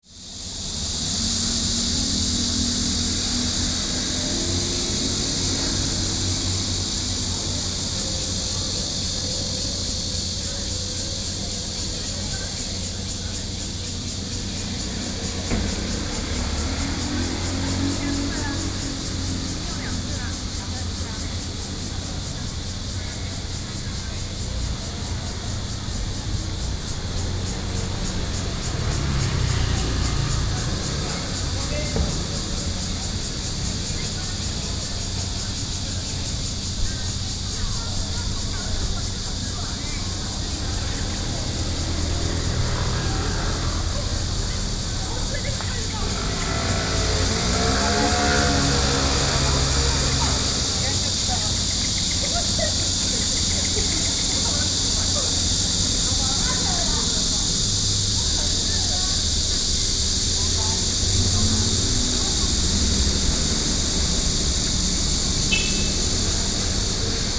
Ln., Rixin St., Shulin Dist., New Taipei City - Cicada sounds
Cicada sounds, Traffic Sound, Hot weather
Sony PCM D50+ Soundman OKM II